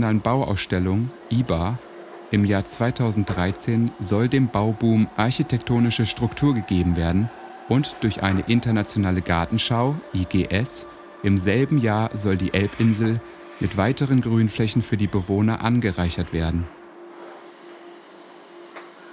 Fahrt der S-3 vom Hamburger Hauptbahnhof nach Wilhelmsburg sowie Exkurs Wilhelmsburg.